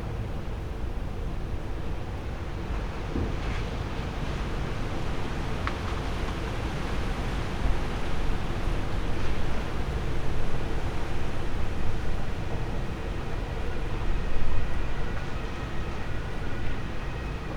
Izanska Cesta, Ljubljana, Slowenien - rain, wind, thunder
recording a strong wind with rain, open windows in a small house, olympus LS-14, build in microphones, center enabled